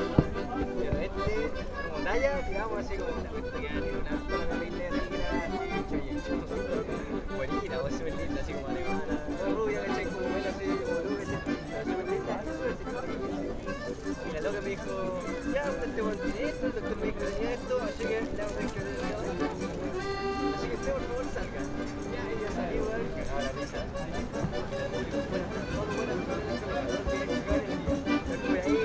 berlin, maybachufer: speakers corner neukölln - the city, the country & me: balkan street band
balkan street band with accordions and brass section
the city, the country & me: april 12, 2011